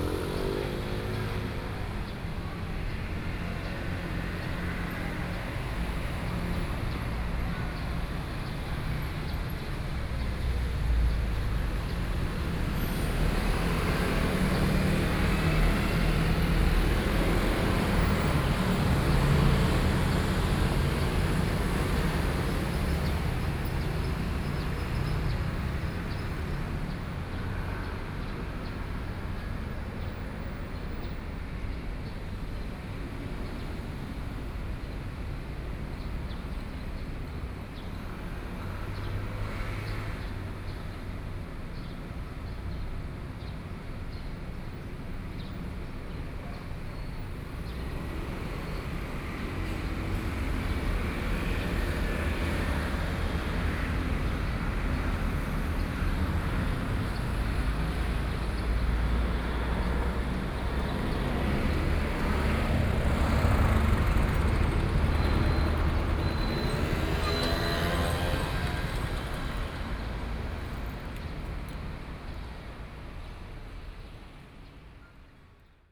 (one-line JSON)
{"title": "Nuzhong Rd., Yilan City - Traffic Sound", "date": "2014-07-27 09:42:00", "description": "In front of the convenience store, Traffic Sound, At the roadside\nSony PCM D50+ Soundman OKM II", "latitude": "24.75", "longitude": "121.76", "altitude": "10", "timezone": "Asia/Taipei"}